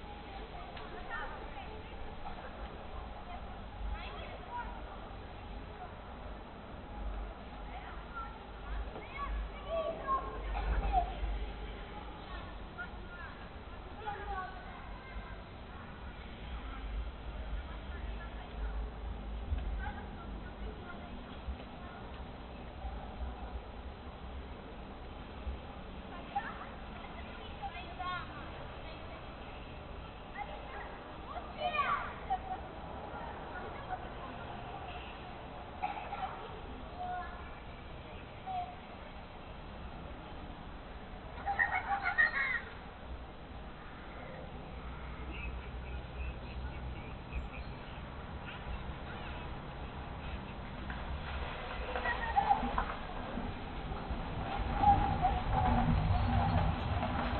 вулиця Незалежності, Костянтинівка, Донецька область, Украина - Дети на улице и звуки автомобиля
Дети играют на улице, мимо проезжает автомобиль
Звук: Boya by-pvm 1000l
Donetska oblast, Ukraine, 29 September 2018